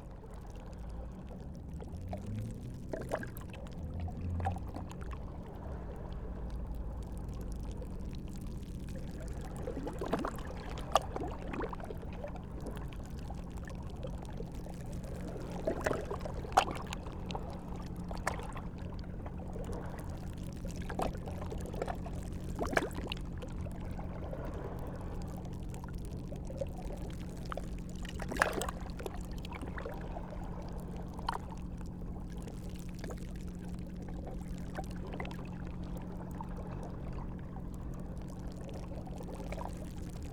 Kos, Greece, amongst the stones